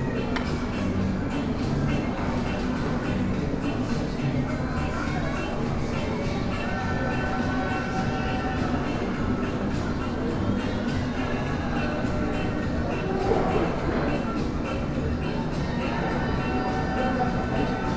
Portal Guerrero, Centro, Centro, Gto., Mexico - En las mesas de la parte de afuera de la nevería Santa Clara zona peatonal.
At the tables outside the Santa Clara ice cream parlor pedestrian zone.
I made this recording on july 25th, 2022, at 13:43 p.m.
I used a Tascam DR-05X with its built-in microphones and a Tascam WS-11 windshield.
Original Recording:
Type: Stereo
Esta grabación la hice el 25 de julio 2022 a las 13:43 horas.
Guanajuato, México, 25 July 2022, ~14:00